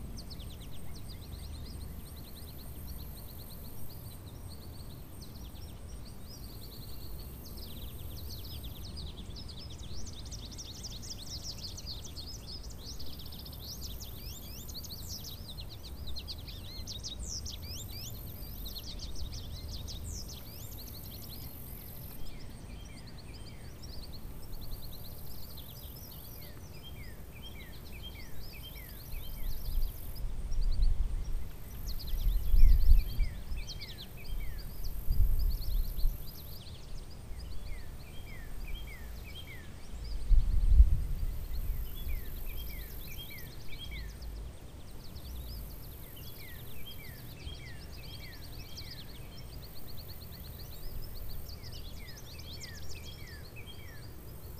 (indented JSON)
{
  "title": "Beaver Pond, Kanata, Ontario, Canada",
  "date": "2010-07-18 11:15:00",
  "description": "World Listening Day, WLD, Beaver Pond, South March Highlands, birds, crickets",
  "latitude": "45.33",
  "longitude": "-75.92",
  "altitude": "93",
  "timezone": "Canada/Eastern"
}